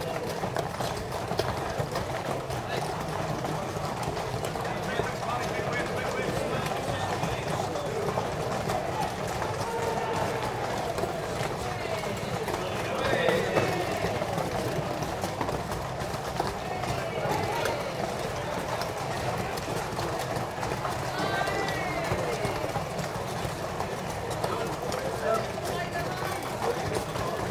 Hawick, Scottish Borders, UK - Hawick Common Riding - mounted horse procession
This is a part of the Hawick Common Riding Festival in which a giant horse procession around the town takes place. In Hawick, they take horse poo very seriously indeed, and in fact immediately after the horses have passed, a massive sweeping machine enters the town to tidy away all the dung IMMEDIATELY.
Recorded with Naiant X-X microphones and Fostex FR-2LE, microphones held at around horse ankle level, about 5m away from the actual horses.